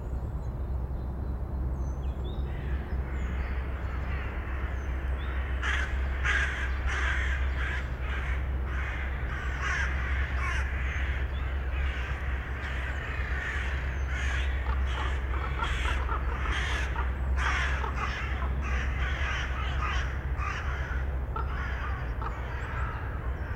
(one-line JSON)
{"title": "Tartu linna asutus Kalmistu, Kalmistu, Tartu, Estonia - Crows and Ravens in raveyard", "date": "2015-02-23 11:00:00", "description": "Crows are chasing ravens in Tartu Raadi graveyard. ORTF 2xMKH8040", "latitude": "58.39", "longitude": "26.72", "altitude": "62", "timezone": "Europe/Tallinn"}